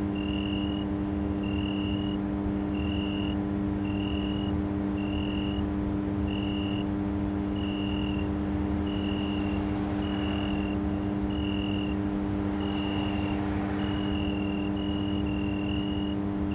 Maribor, Slovenia - The Duet of a Cricket and Electric transformer station
A very loud cricket and an electric transformer station for Lidl jamming in the night...